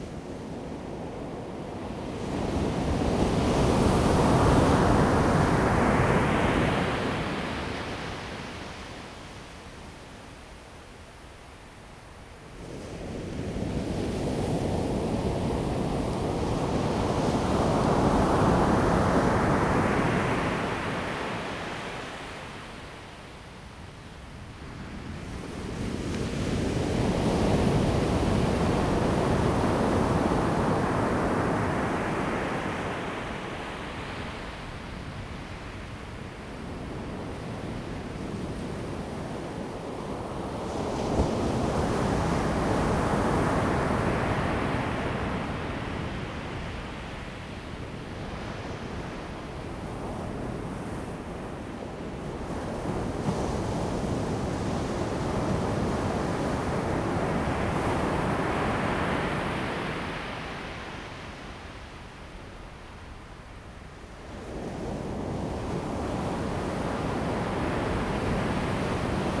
太麻里海岸, 太麻里鄉台東縣 Taiwan - Sound of the waves
Sound of the waves, Beach
Zoom H2n MS+XY
Taitung County, Taiwan, 5 April 2018, ~16:00